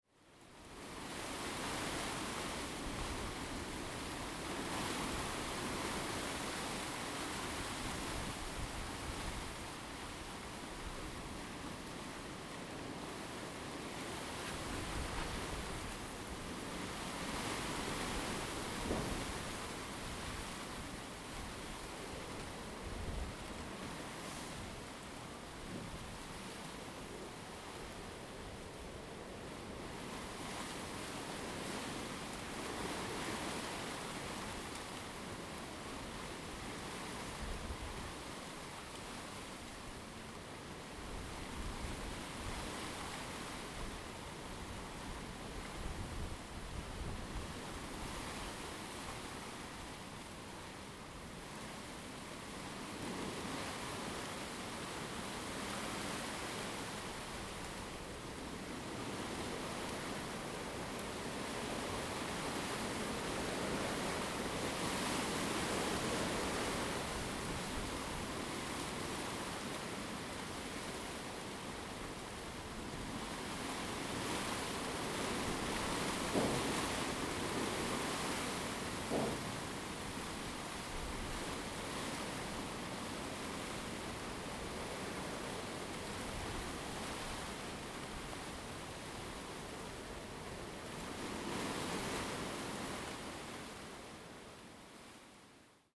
二崁村, Xiyu Township - wind and the tree
Small village, Wind
Zoom H2n MS+XY
Penghu County, Xiyu Township